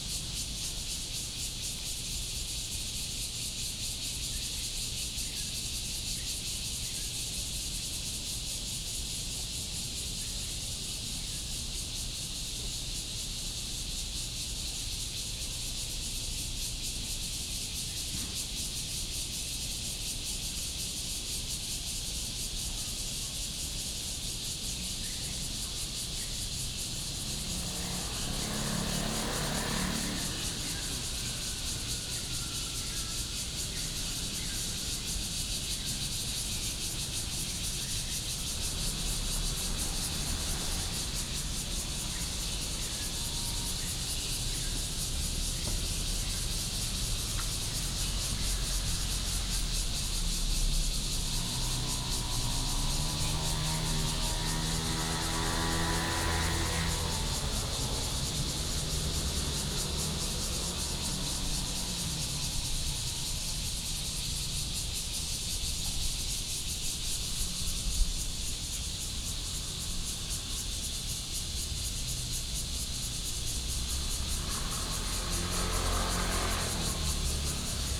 新龍公園, Da'an District - Cicadas cry and Bird calls
in the Park, Cicadas cry, Bird calls, Traffic Sound
Da’an District, Taipei City, Taiwan